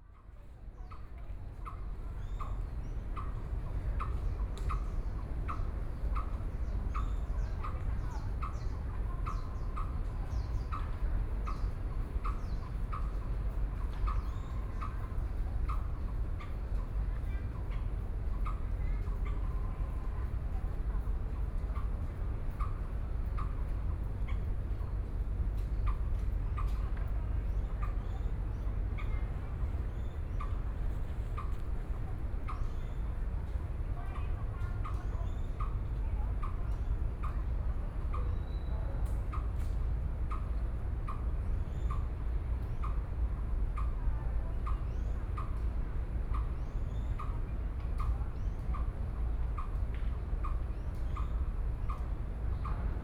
Taipei Botanical Garden, Taiwan - Hot and humid afternoon
Hot and humid afternoon, Sony PCM D50 + Soundman OKM II
台北市 (Taipei City), 中華民國, 13 September 2013, 17:12